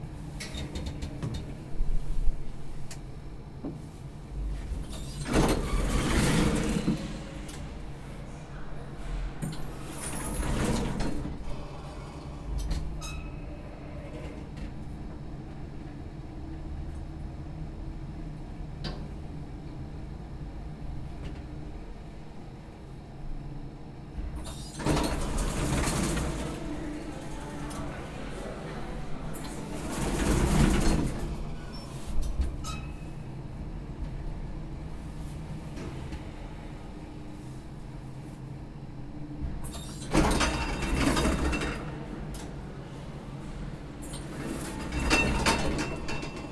Martin Buber St, Jerusalem - Elevator 2 at Bezalel Academy of Arts and Design
Elevator (2) at Bezalel Academy of Arts and Design.
Some people talking, Arabic and Hebrew.